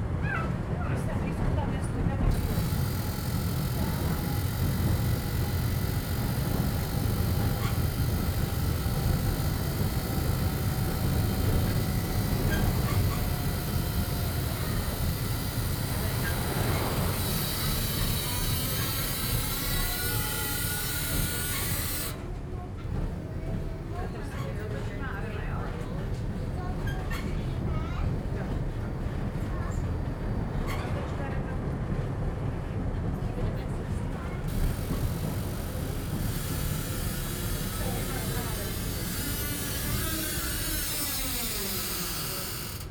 Praha, Belehradská, Tram #6
strange sounds in tram #6 while driving downhill